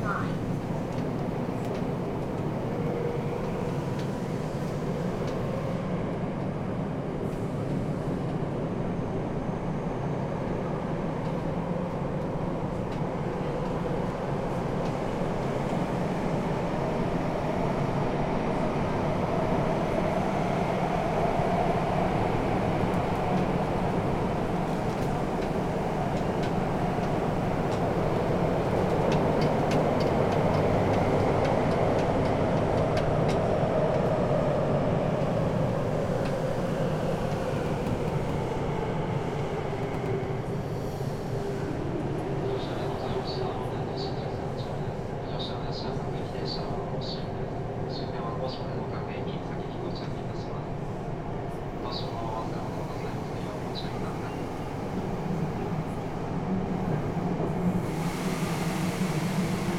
subway ride from Kitasando to Nishiwaseda station.